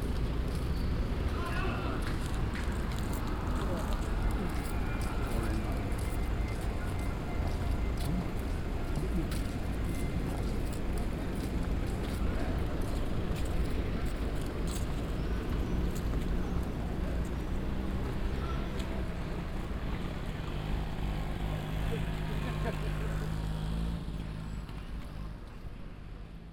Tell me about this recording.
on abridge across the prinsengracht channel, traffic and people on the street. a boat crossing the bridge. international city scapes - social ambiences and topographic field recordings